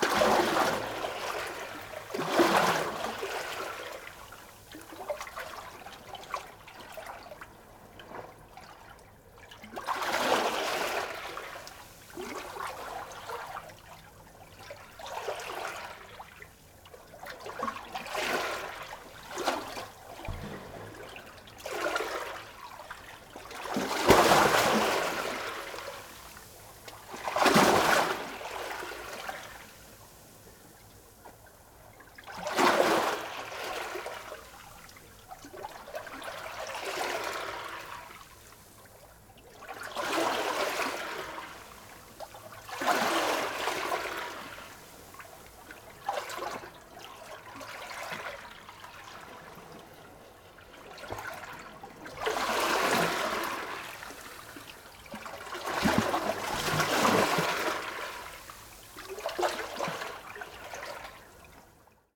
Novigrad, Croatia - square hole
sounds of sea from near the square hole, pebbles and sea foam
18 July 2013